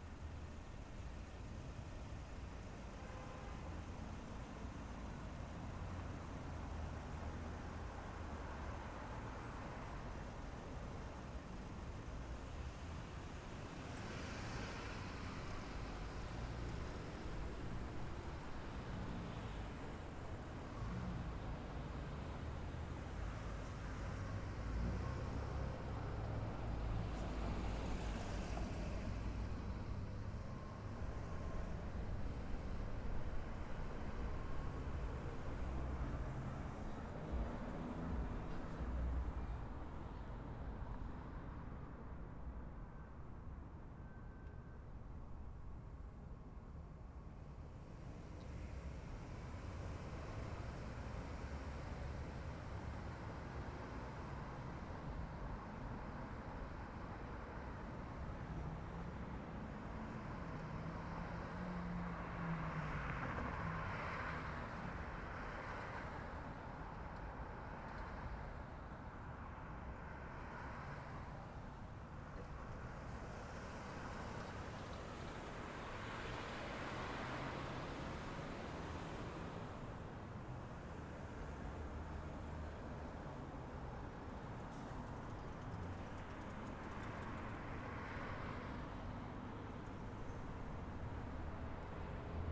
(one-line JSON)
{"title": "East Elmhurst, Queens, NY, USA - Sitting Underneath The LaGuardia Airport Welcome Sign", "date": "2017-03-03 14:30:00", "description": "Traffic intersection at the entrance to LaGuardia Airport", "latitude": "40.77", "longitude": "-73.89", "altitude": "8", "timezone": "America/New_York"}